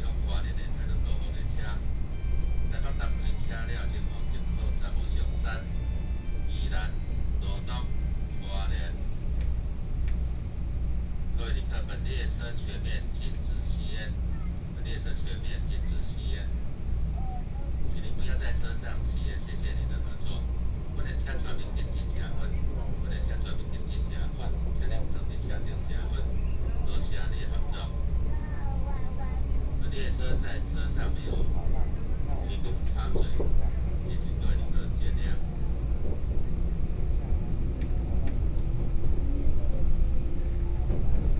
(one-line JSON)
{"title": "Xinyi District, Taipei - Tze-Chiang Train", "date": "2013-11-07 07:31:00", "description": "Train broadcast messages, from Taipei Station to Songshan Station, Zoom H4n+ Soundman OKM II", "latitude": "25.05", "longitude": "121.57", "altitude": "12", "timezone": "Asia/Taipei"}